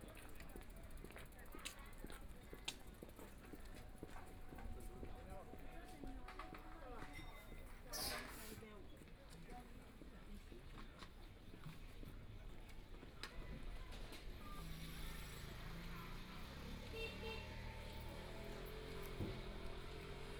Liu He Kou Rd., Shanghai - Antiques Market
Walking in the Antiques Market, Binaural recordings, Zoom H6+ Soundman OKM II